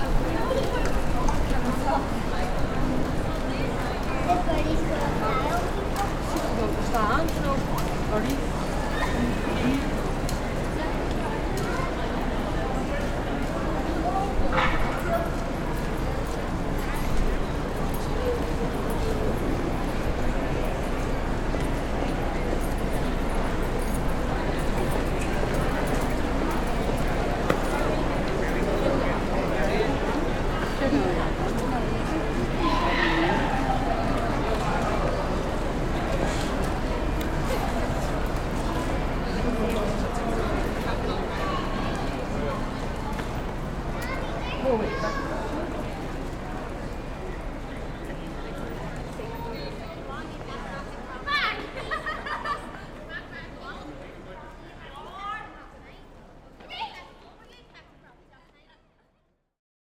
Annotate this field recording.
In the middle of a large crossing point for shoppers, it seems as if the idea has been to try to return things to normal in our daily lives. However, looking around you see some frightened by the current epidemic — wearing masks continuously outdoors, others removing after exiting a store and others have them stowed away in their jacket or bag. Months ago, when the lockdown began, this area had a large difference in sonic characteristics, as people have returned there is a returning sound of congestion by our human presence, we fill the gaps between the industrial and natural sound environment generated in these types of places.